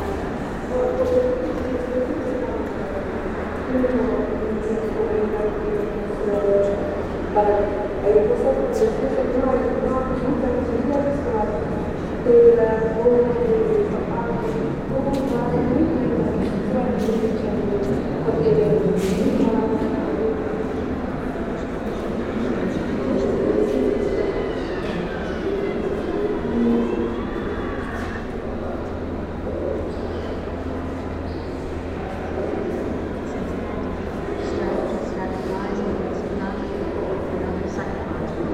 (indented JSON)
{
  "title": "Sounds of the Istanbul Biennial",
  "description": "Ambient sounds of the Antrepo No.3 exhibition hall during the 11th Istanbul Biennial",
  "latitude": "41.03",
  "longitude": "28.98",
  "altitude": "4",
  "timezone": "Europe/Tallinn"
}